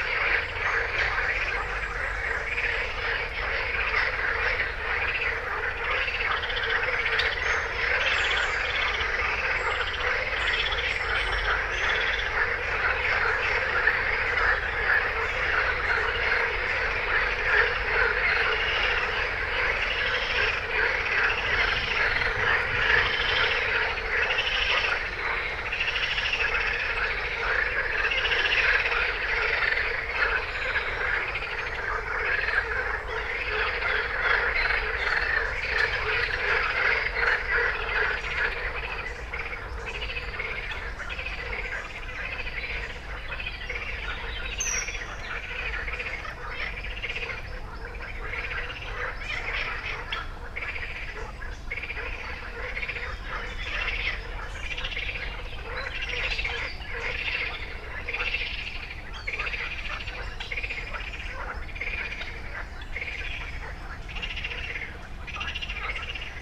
Base de sports et loisirs des Quinze sols, Zone de Loisirs des Quinze Sols, Blagnac, France - Ragondins et batraciens à la ripisylve #1
Très rapidement, après avoir posé ce piège à son pour la nuit, la vie sauvage de ce petit paradis de nature (en pleine Métropole toulousaine... sonouillard oblige...) reprend. Il n'aura pas été vain de venir en repérage quelques jours plutôt sans laisser les micros pour décider du meilleur endroit pour le faire. Et, en effet, les petites boules de poils que j'avais entrevu nager en nombre à la surface de l'étang, n'auront pas manqué de faire entendre leurs drôles de voix, pleine de candeur et d'émotion.
Usi Pro (AB) + Zoom F8